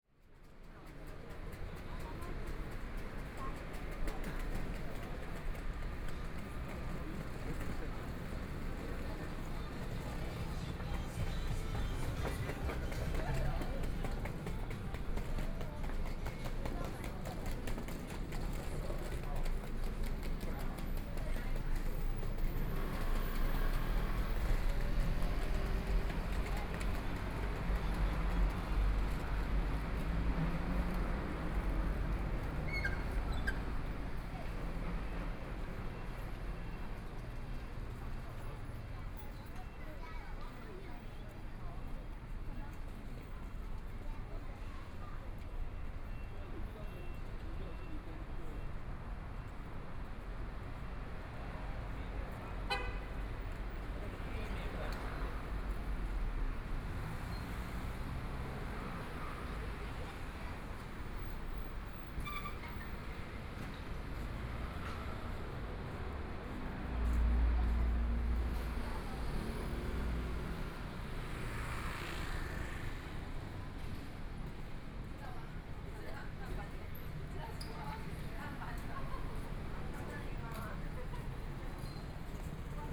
Jinzhou St., Zhongshan Dist. - walking in the Street
walking in the Street, Environmental sounds, Traffic Sound, Walking through a variety of different kinds of shops, Binaural recordings, Zoom H4n+ Soundman OKM II